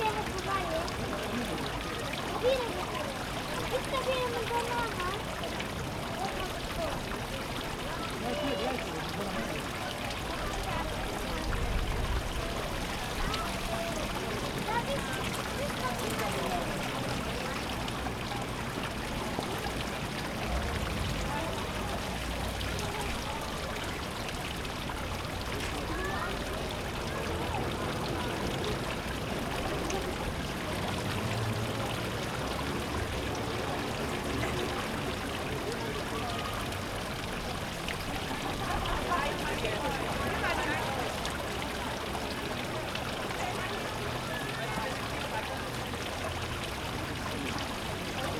wiesbaden, kochbrunnenplatz: fountain - the city, the country & me: fountain
the city, the country & me: may 6, 2016